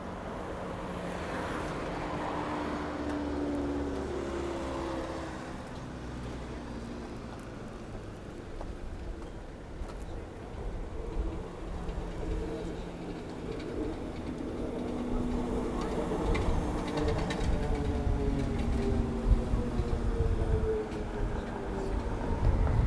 пл. Героїв Чорнобиля, Вінниця, Вінницька область, Україна - Alley12,7sound9Centralbridge
Ukraine / Vinnytsia / project Alley 12,7 / sound #9 / Central bridge